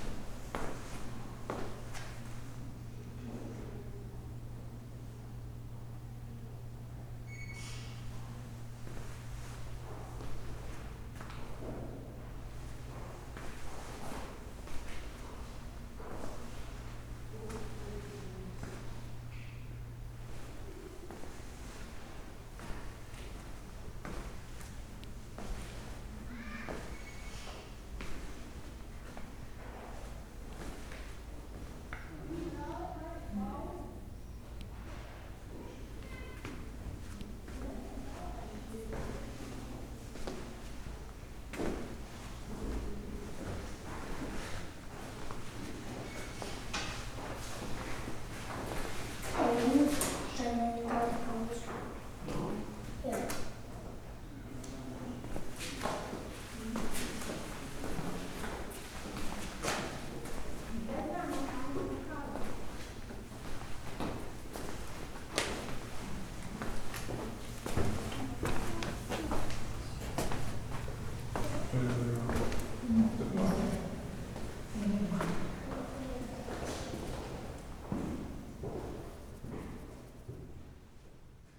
short walk in Rundale park museum